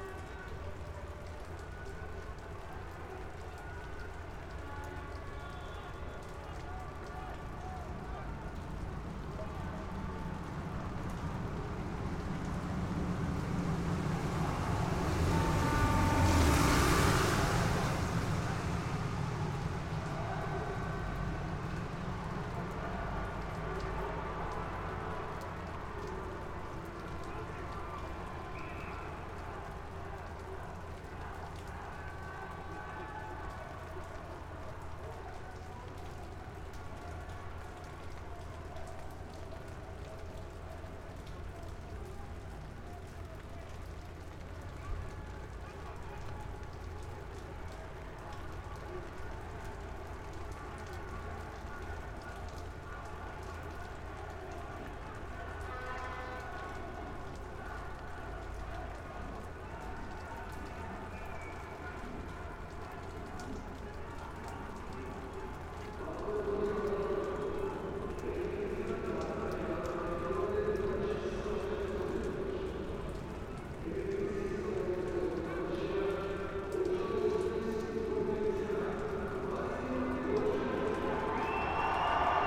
Slava Metreveli St, T'bilisi, Georgia - Rugby at Tbilisi Dinamo Stadium
Georgia national rugby team is winning Europe Championship 2022. It is raining and drizzling.
External perspective of the stadium.
IRT Cross, AE5100, Zoom F6.